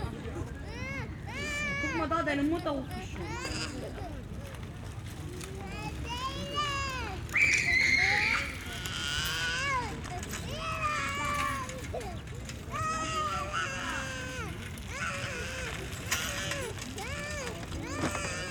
Tempelhofer Feld, Berlin, Deutschland - entrance area south east
summer evening ambience at the south east entrance to the Tempelhof field. lots of activity, bikers, runners, pedestrians, a little girl is crying like hell because her family left her behind.
(SD702, AT BP4025)
Berlin, Germany, 21 August 2012